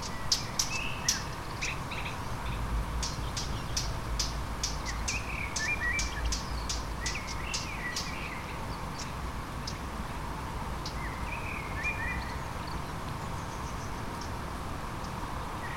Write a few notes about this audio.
Window recording of a Eurasian Hoopoe early in the morning (5 am on rainy weather). Recorded with UNI mics of Tascam DR 100Mk3